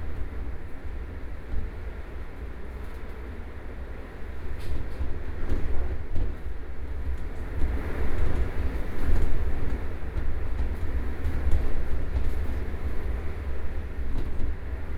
Ln., Sec., Zhongyang N. Rd., Beitou Dist - Typhoon
Strong wind hit the windows, Sony PCM D50 + Soundman OKM II